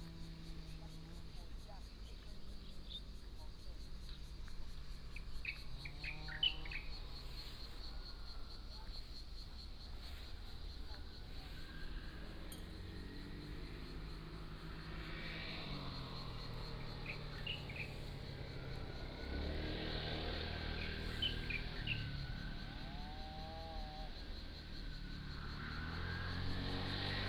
卑南里, Taitung City - In farmland
Birdsong, Crowing sound, Traffic Sound, the sound of aircraft flying, Train traveling through